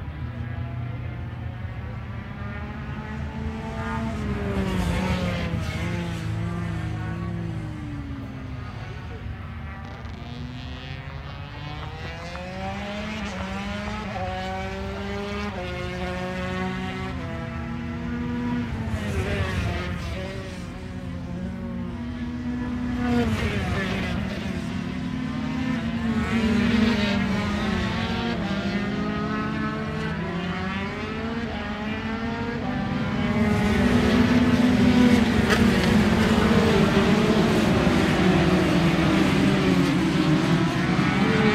Donington Park Circuit, Derby, United Kingdom - British Motorcycle Grand Prix 2004 ... 125 ...
British Motorcycle Grand Prix 2004 ... 125 free practice ... one point stereo mic to mini-disk ...
24 July